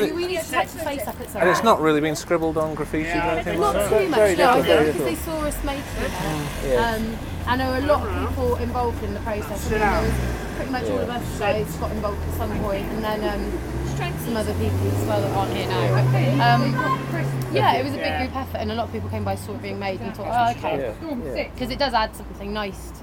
Efford Walk Two: More on Crazy Glue group mural - More on Crazy Glue group mural